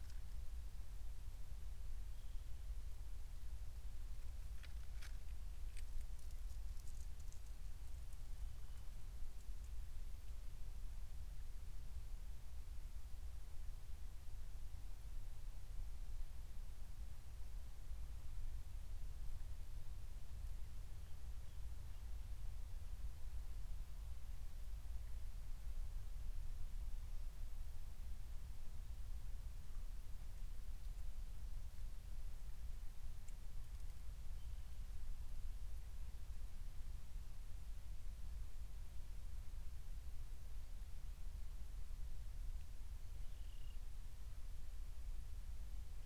Berlin, Buch, Mittelbruch / Torfstich - wetland, nature reserve
00:00 Berlin, Buch, Mittelbruch / Torfstich 1
Deutschland